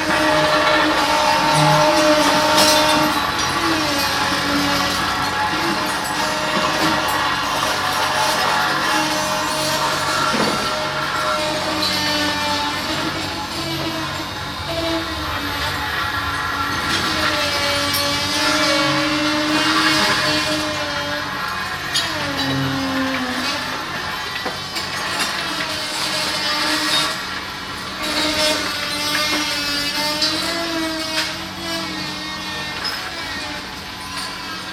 Oosterparkbuurt, Amsterdam, Nederland - Restoration works on a old school.
Restauratiewerkzaamheden/restoration work 3e H.B.S. Mauritskade (Amsterdam, July 22nd 2013) - binaural recording.